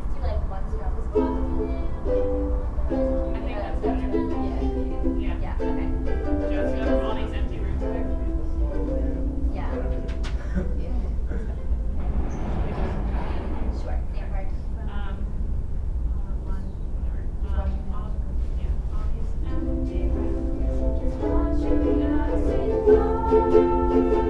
Muhlenberg College, West Chew Street, Allentown, PA, USA - Outside the Red Door
Students practice a holiday melody on ukuleles outside the Red Door in the Muhlenberg student Union building.